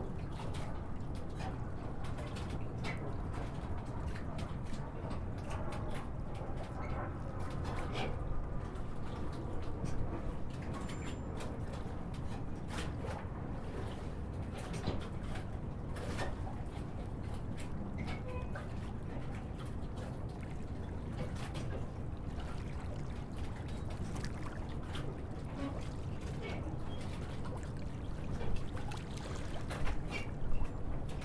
Recorded on the dock on Sainte-Helène island with a Zoom H4n in stereo.